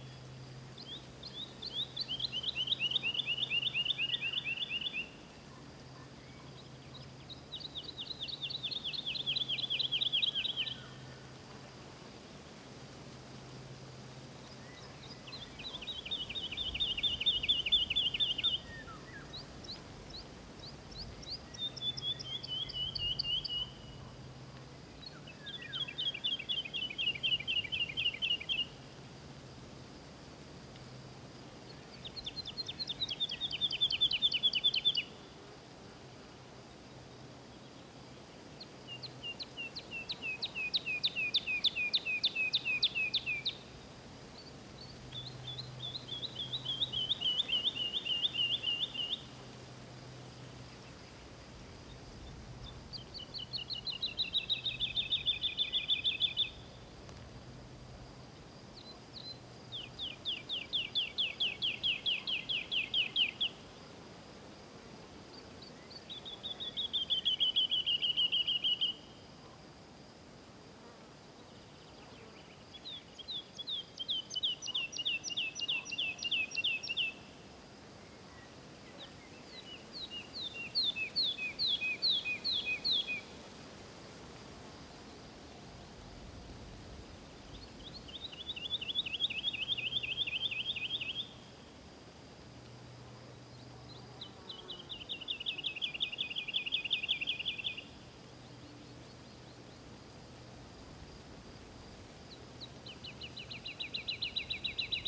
Fürstenberger Wald- und Seengebiet, Germany - Lovely song of the Woodlark
with a light breeze hissing the leaves in the birch and beach trees and occasional golden orioles, crows and blackbird in the background.